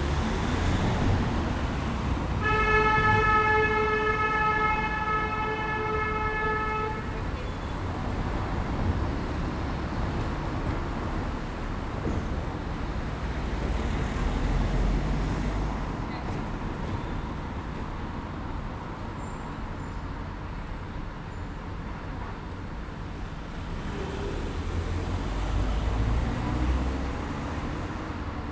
Santo Ildefonso, Portugal - Rua Formosa
Traffic jam.
Zoom H4n
Porto, Portugal, 8 December 2014